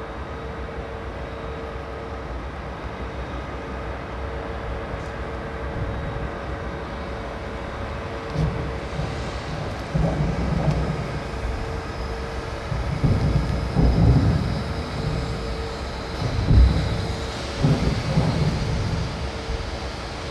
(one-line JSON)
{"title": "Binckhorst Harbour, Saturnusstraat", "date": "2011-11-24 15:00:00", "description": "Metal thrown into truck. harbour ambience.", "latitude": "52.07", "longitude": "4.35", "altitude": "2", "timezone": "Europe/Amsterdam"}